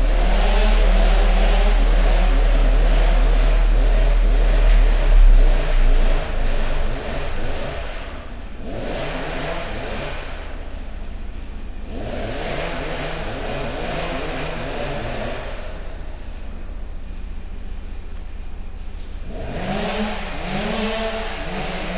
Krusvari, Chorwacja - forest works at a waterfall site
recorded at one of the waterfalls on the seven waterfalls trail near Buzet. chainsaw and lumberjack conversations (roland r-07)